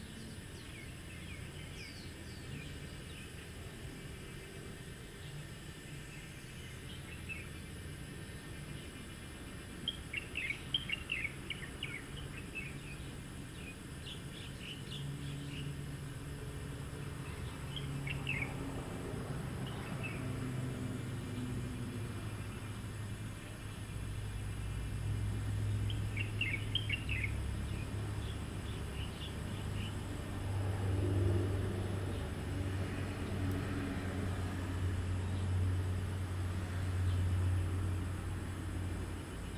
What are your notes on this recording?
Bird calls, Cicadas sound, Traffic Sound, Zoom H2n MS+XY